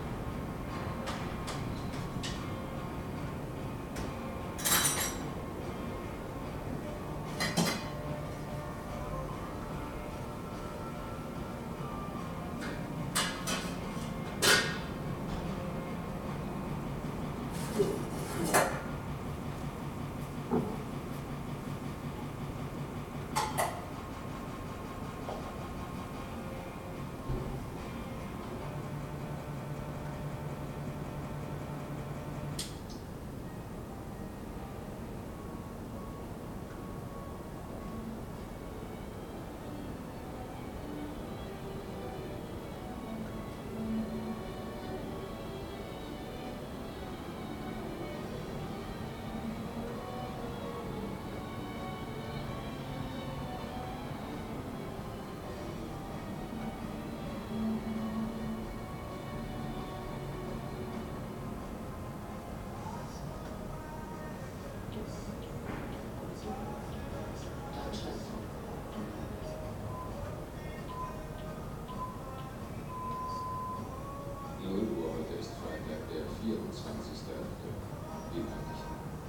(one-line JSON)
{"title": "Köln, Maastrichter Str., backyard balcony - neue maas 14, midnight", "date": "2009-04-24", "description": "24.04.2009 00:00 night ambience: radio, kitchen noise, midnight news", "latitude": "50.94", "longitude": "6.93", "altitude": "57", "timezone": "Europe/Berlin"}